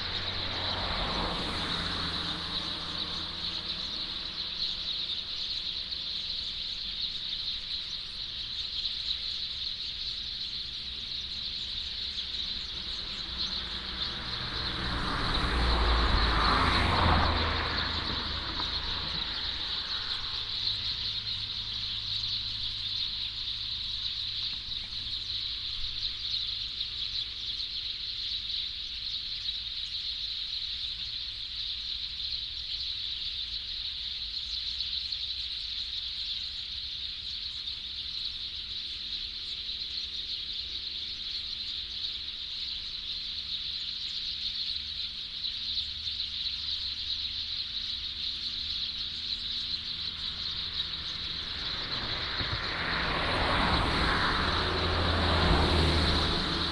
樂德公路, Yuli Township - Birdsong
Birdsong, Traffic Sound, Next to the Agricultural land